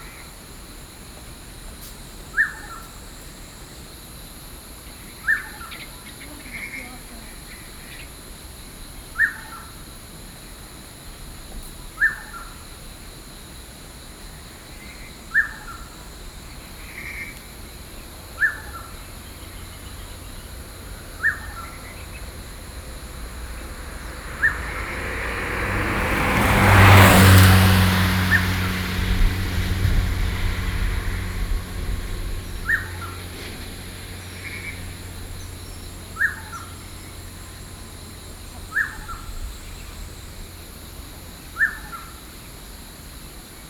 Bird and Stream
Zoom H4n+Rode NT4(soundmap 20120711-20 )